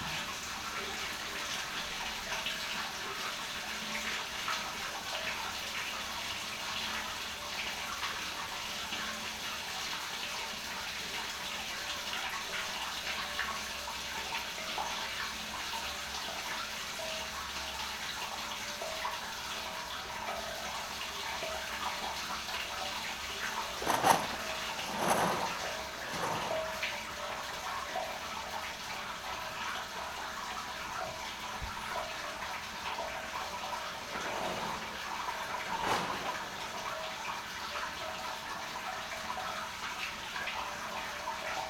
Beselich Niedertiefenbach, Grabenstr. - water in drain
little creek and other waters running in a canal below the street.